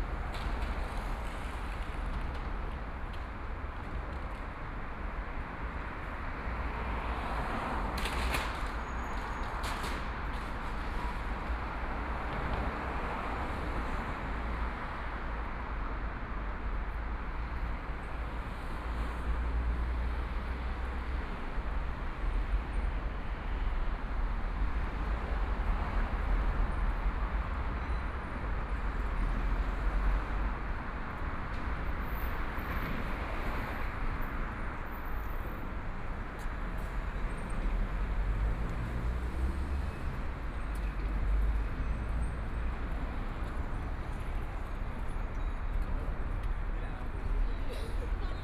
South Station Road, Shanghai - on the road
Bells, Bells are the voice prompts from riding a bicycle to make recycling, Traffic Sound, Binaural recording, Zoom H6+ Soundman OKM II
Shanghai, China